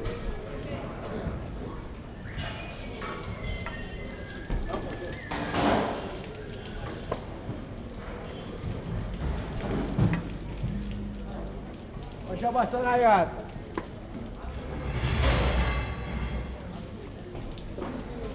Via Cardinale Dusmet, Catania CT, Italy - Fish Market
Fish Market under the railway, cleaning of the place.
21 March